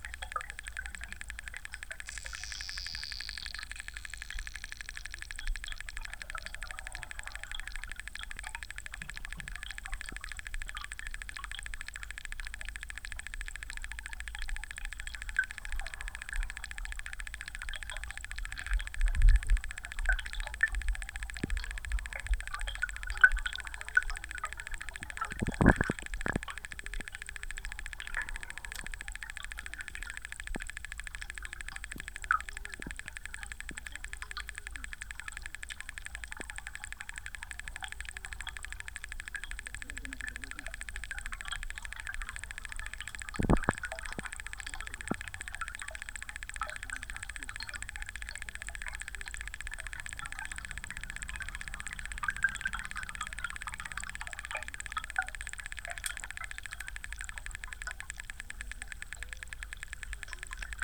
Kirkilai, Lithuania, karst lake underwater
hydrophones in the one of karst lakes of Birzai area
23 August 2015, ~16:00